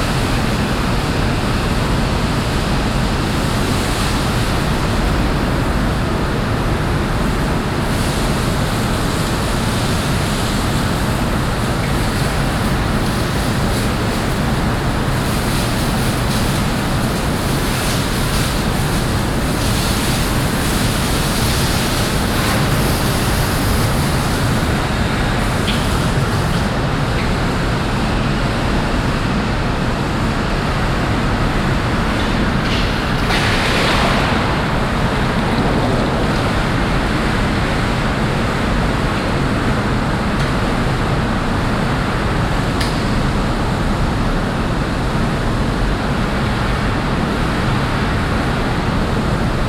Pempelfort, Düsseldorf, Deutschland - Düsseldorf, Münstertherme, swim hall
Inside the swim hall. The sound of workers cleaning the place.
This recording is part of the intermedia sound art exhibition project - sonic states
soundmap nrw - topographic field recordings, social ambiences and art places